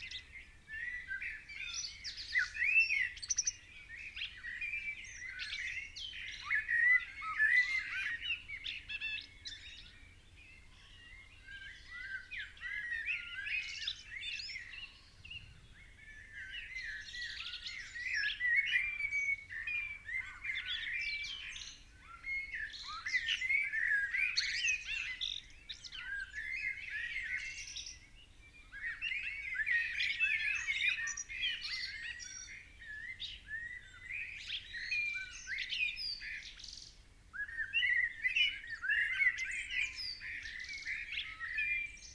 Chapel Fields, Helperthorpe, Malton, UK - early morning blackbirds ...
Early morning blackbirds ... binaural dummy head on the garden waste bin ... calls ... song ... from robin ... carrion crow ... pheasant ... background noise ...